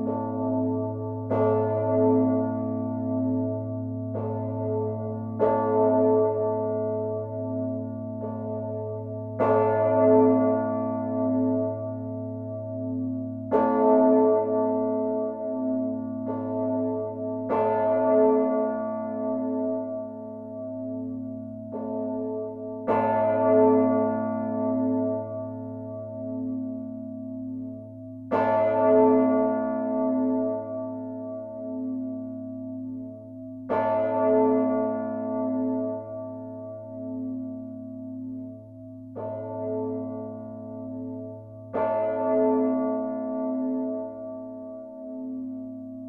Vieux-Lille, Lille, France - Lille bell
The Lille cathedral big bell. I ring it manually, making contacts in the electrical table, as the system is completely defective. Two monthes after, the second bell lost its clapper. Quite a dangerous place to record... This recording was made during the writing of a book about the Lille cathedral.